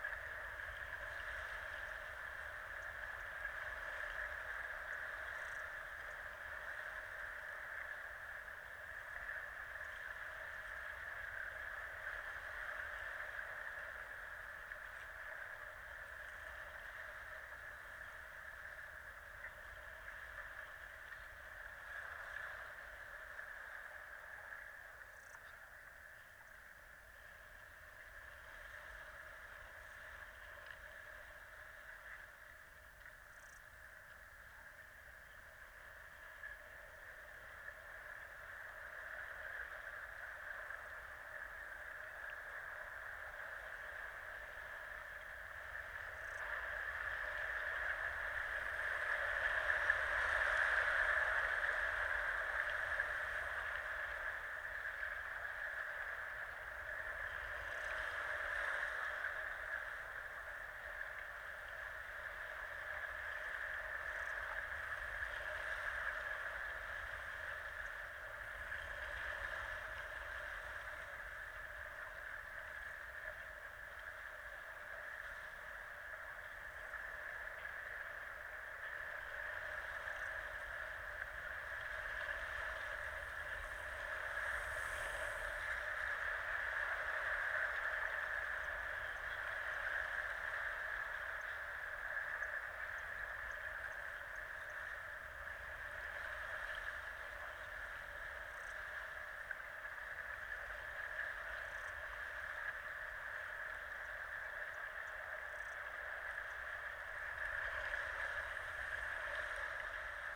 Royal National Park, NSW, Australia - (Spring) Inside The Entrance To Marley Lagoon
A very quiet underwater soundscape at the beginning of Marley Lagoon, I'm sure I would get a lot more sounds if I was able to get deeper into the lagoon. I recorded in this spot nearly a year ago and the sounds are very similar.
Two JrF hydrophones (d-series) into a Tascam DR-680
Wollongong NSW, Australia, September 2014